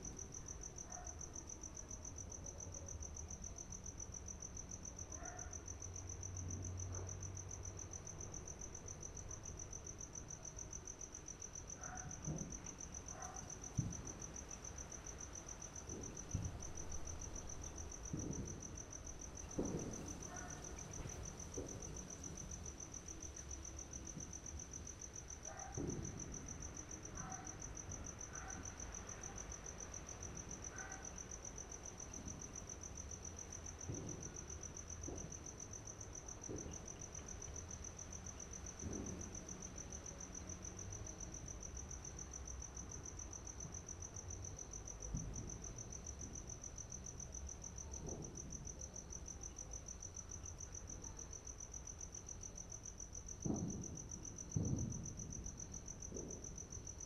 St, Linden, Randburg, South Africa - End of 2017 Celebrations
New Year Fireworks displays from private homes around North Western Johannesburg. Wind, dogs barking, fireworks and a light aircraft taking the aerial view of the celebration of the passing of 2017. Piezo EM172's on a Jecklin disc to SD702